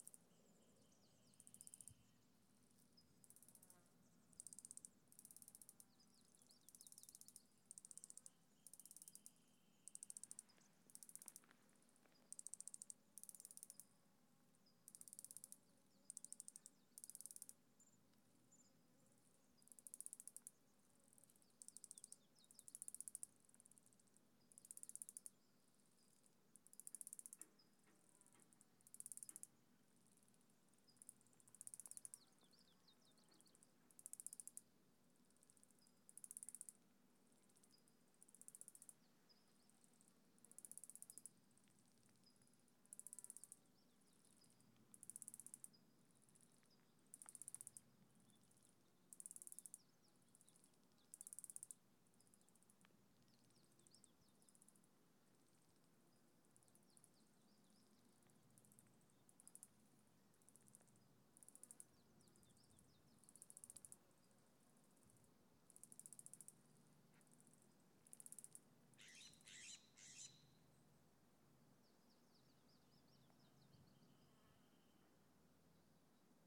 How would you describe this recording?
Recording of clicking sounds by insects